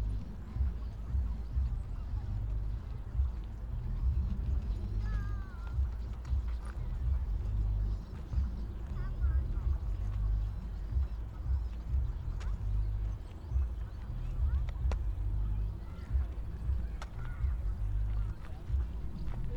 Tempelhofer Feld, Berlin, Deutschland - ambience with soundsystem
I was looking for flocks of starlings, but a stupid sound system somewhere at the edge of the park was dominating the acoustic scene. anyway, it reflects the various activities going on at the former airfield.
(SD702, 2xNT1, amplified above natural levels)
Germany